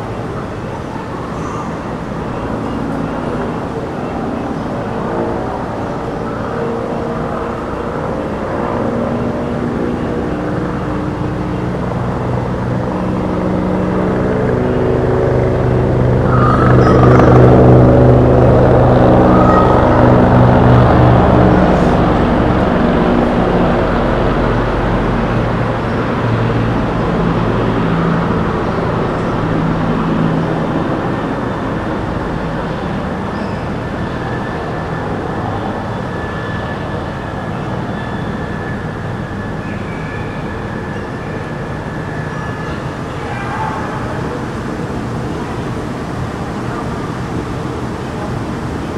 Rooftop party and helicopter flyover in Penn Quarter, downtown DC.